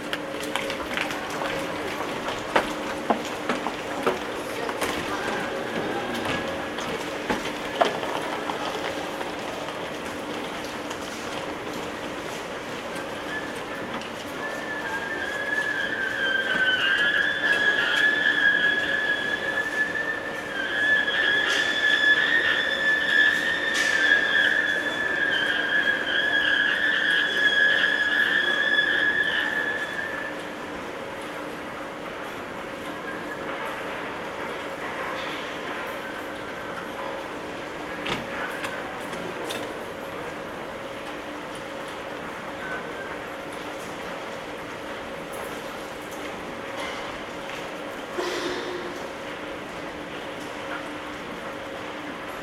Brussels, Midi Station, screaming escalator.
Une prise de son en souterrain, à Bruxelles, sortie de métro Gare du Midi, prendre lescalator qui va vers lAvenue Fonsny, il émet de bien belles stridences.
Saint-Gilles, Belgium